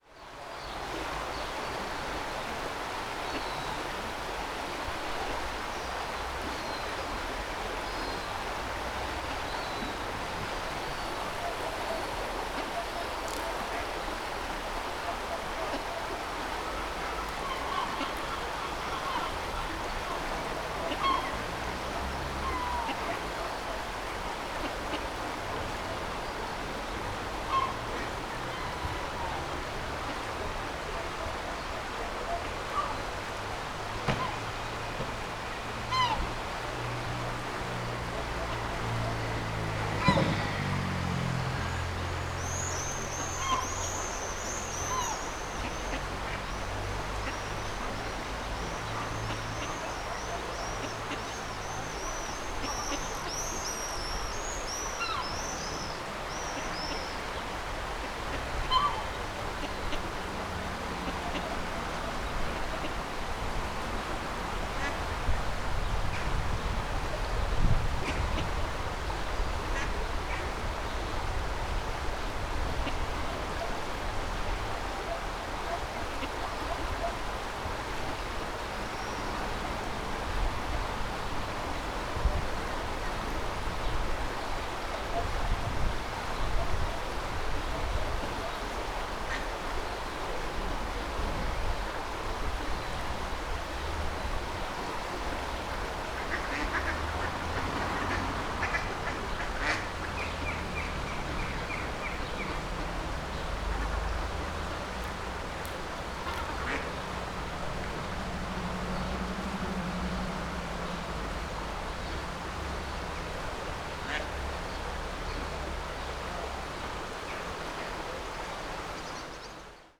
swoosh of a gentle waterfall, ducks swimming around, upset tern on one of the roof, traffic noise from the main street nearby